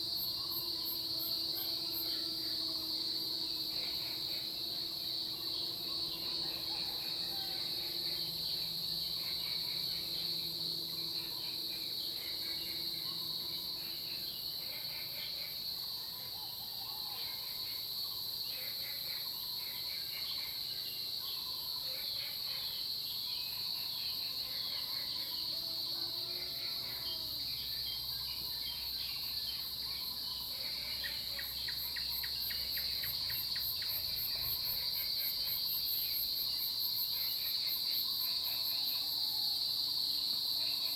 綠屋民宿, 埔里鎮桃米里 - In the morning
In the morning, Bird calls, Crowing sounds, Cicadas cry, Frog calls
Zoom H2n MS+XY
Puli Township, Nantou County, Taiwan, 12 June